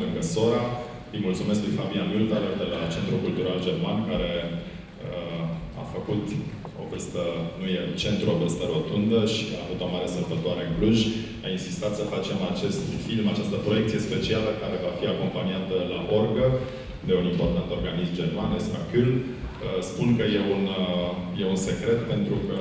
Old Town, Klausenburg, Rumänien - cluj, case de cultura, TIFF opening 2014
At the TIFF opening 2014 inside the main hall of the casa de cultura a studentilor.
The sound of a short project Trailer, then the voices of the festival director Tudor Giurgiu and the artistic director Mihai Chirilov.
international city scapes - field recordings and social ambiences
Cluj-Napoca, Romania, 30 May 2014